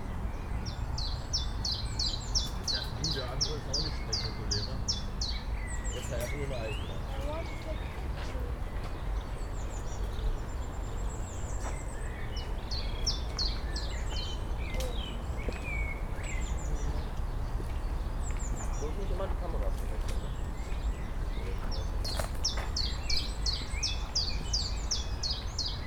TP Rauenberg - Sunday park ambience
place revisited on a spring Sunday afternoon. Birds, city hum, promenaders. Nothing special happens.
(Sony PCM D50, DPA4060)